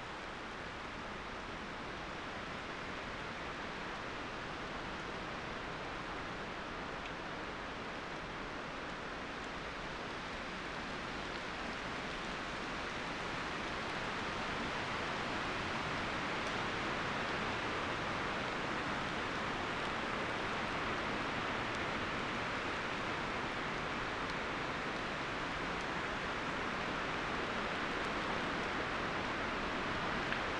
Utena, Lithuania, rain starts on tumulus ground